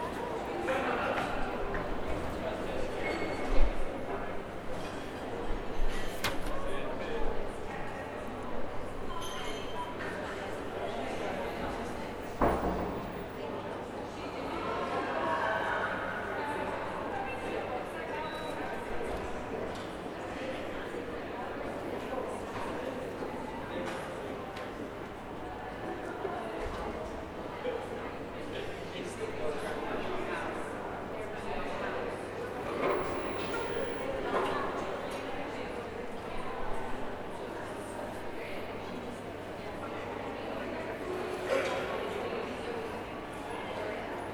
Background Sound, Sage Gateshead - 10:15PM
Some background ambience I recorded after a performance of Pierrot Lunaire at the Sage Gateshead. Enjoy :)